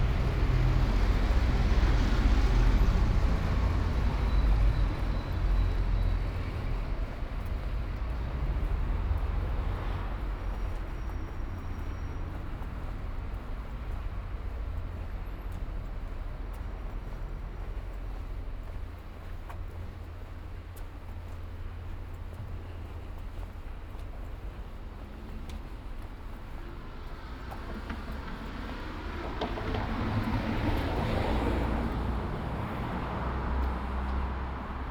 Ascolto il tuo cuore, città. I listen to your heart, city. Several chapters **SCROLL DOWN FOR ALL RECORDINGS** - “Shopping in the re-open market at the time of covid19” Soundwalk
“Shopping in the re-open market at the time of covid19” Soundwalk
Chapter XXIII of Ascolto il tuo cuore, città. I listen to your heart, city.
Thursday March 26 2020. Shopping in the re-open air square market at Piazza Madama Cristina, district of San Salvario, Turin, sixteen days after emergency disposition due to the epidemic of COVID19.
Start at 11:25 a.m., end at h. 00:01 p.m. duration of recording 36’11”
The entire path is associated with a synchronized GPS track recorded in the (kml, gpx, kmz) files downloadable here: